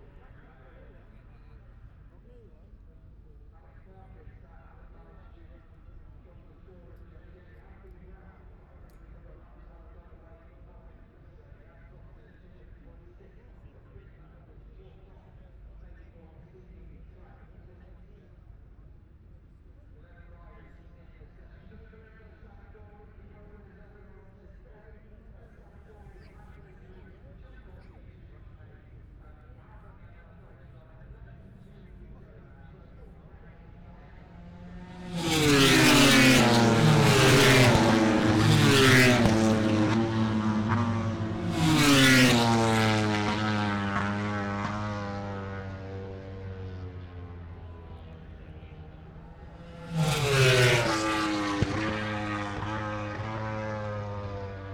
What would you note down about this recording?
moto grand prix qualifying one ... wellington straight ... dpa 4060s to MixPre3 ...